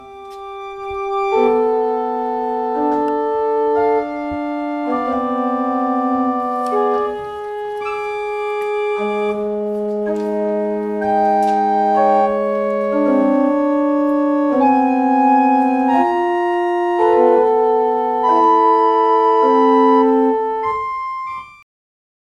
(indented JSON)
{
  "title": "Anholt, Danmark - Church Organ",
  "date": "2012-08-20 10:30:00",
  "description": "The recording is made by the children of Anholt School and is part of a sound exchange project with the school in Niaqornat, Greenland. It was recorded using a Zoom Q2HD with a windscreen.",
  "latitude": "56.70",
  "longitude": "11.54",
  "altitude": "10",
  "timezone": "Europe/Copenhagen"
}